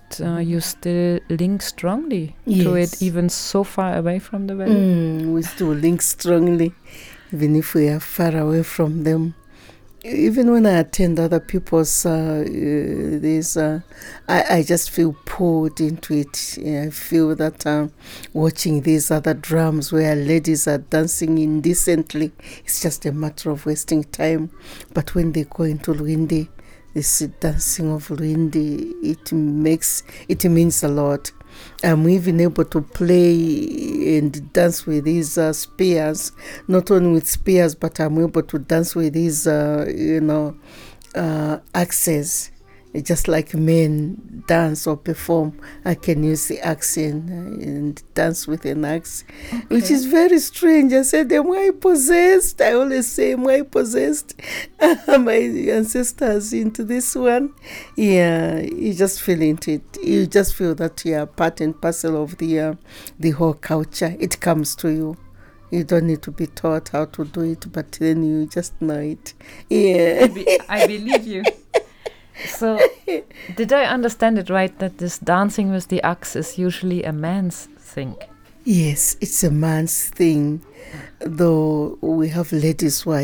Residence of Chiefteness Mwenda, Chikankata, Zambia - Belonging comes natural with the sound of the drums from the Valley…
Chiefteness Mwenda was a baby girl of three at the time of the forced removal; but her father came from the valley, and the memory of the forced removal and resettlement of the Tonga people and, of the Tonga culture and tradition was very much present in the family when Eli Mwiinga was growing up... in this part of the interview, i encourage Chiefteness Mwenda to tell us a little more what the presence of this history means to her...
the entire interview with the Chiefteness is archived here: